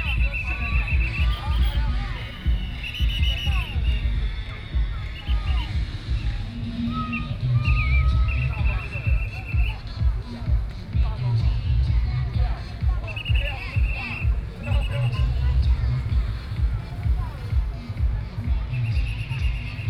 內湖區港富里, Taipei City - Traditional Festivals
Traditional Festivals, Distance came the sound of fireworks, Traffic Sound
Please turn up the volume a little. Binaural recordings, Sony PCM D100+ Soundman OKM II
12 April 2014, ~21:00